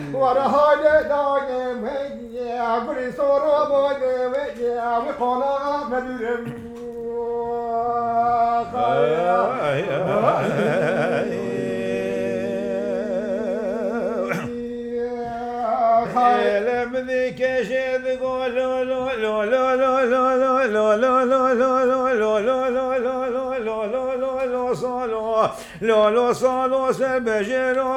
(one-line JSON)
{"title": "Ziya Gökalp, Kılıçı Sk., Sur/Diyarbakır, Turkey - Kurdish singers at the Dengbêj house, Diyarbakır, Turkey", "date": "2019-08-01 14:55:00", "description": "Kurdish traditonal dengbêj singers recorded at the Dengbêj House (Dengbêj Evi), Diyarbakır, Turkey.", "latitude": "37.91", "longitude": "40.23", "altitude": "672", "timezone": "Europe/Istanbul"}